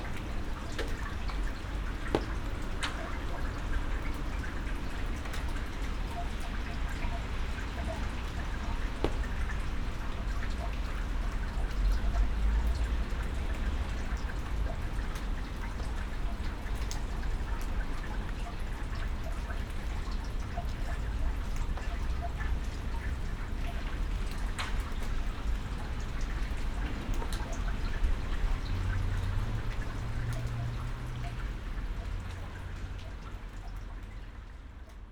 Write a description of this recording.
under the awning of the bar, rainwater runs off into a drain gully, the city, the country & me: june 1, 2012, 99 facets of rain